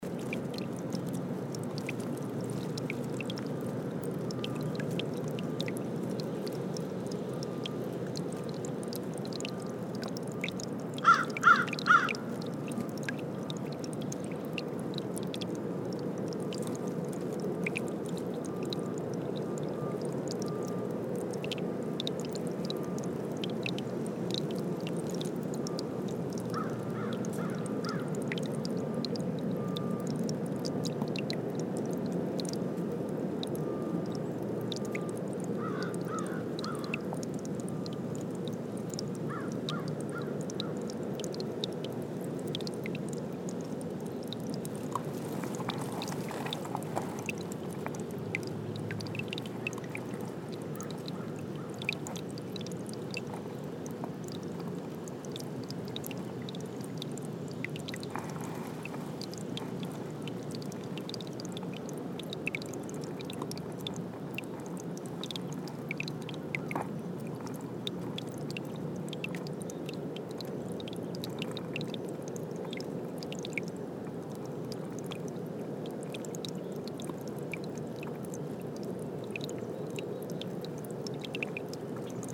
South Hill, NY, USA - Creek with crows
Stereo recording on a mixpre with shotgun mic in a field near Ithaca College with a creek running through it and crows flying around.